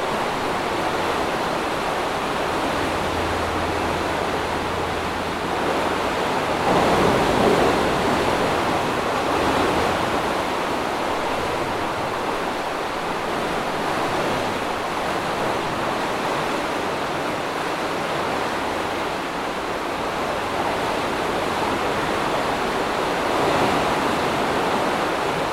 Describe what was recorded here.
Sous le pont ferroviaire de Vions, à l'écoute des remous du fleuve autour des piles du pont, belle vue en perspective à cet endroit près d'une règle de mesure du niveau.